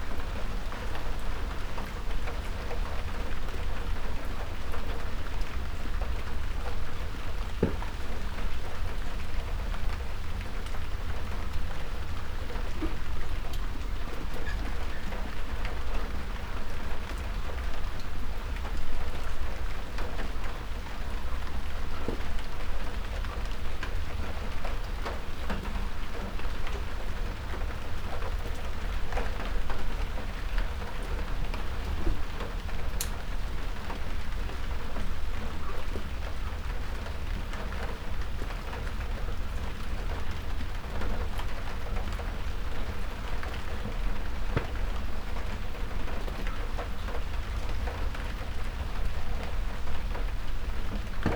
Malvern Wells, Worcestershire, UK - Rain
Early on the morning of the longest day it rained after a long dry spell.
MixPre 6 II with 2 x Sennheiser MKH 8020s.